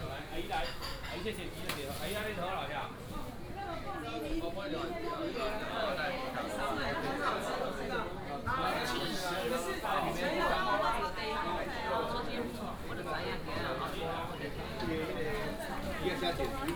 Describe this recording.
Walking in the traditional market inside